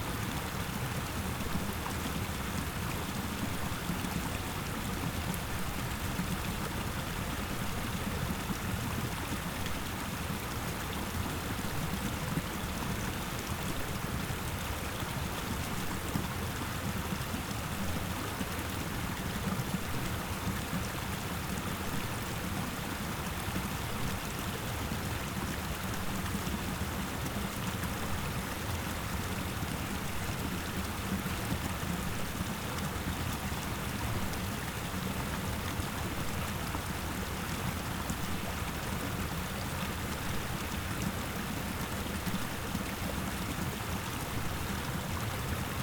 São Miguel-Azores-Portugal, Caldeira Velha, running creek